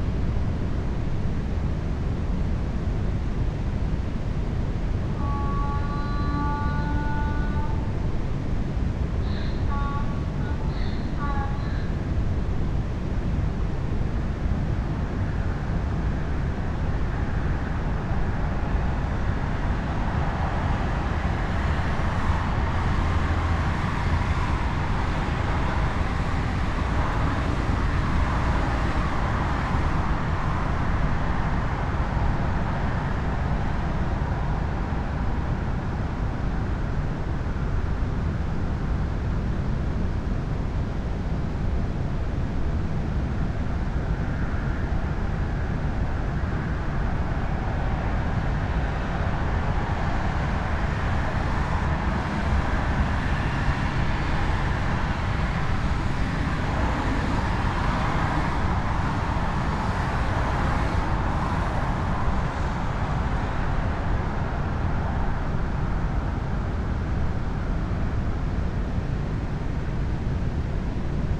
Niévroz, Impasse dAlsace, near the dam
A mule and an electric gate, drone coming from the dam.
SD-702, Me-64, NOS